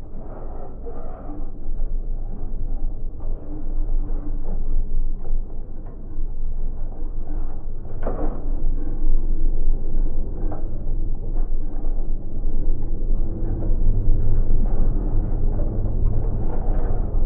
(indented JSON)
{
  "title": "Seduikiai, Lithuania, abandoned water tower",
  "date": "2020-06-13 13:40:00",
  "description": "Abandoned metallic watertower from soviet times. LOM geophone recording.",
  "latitude": "55.46",
  "longitude": "25.74",
  "altitude": "195",
  "timezone": "Europe/Vilnius"
}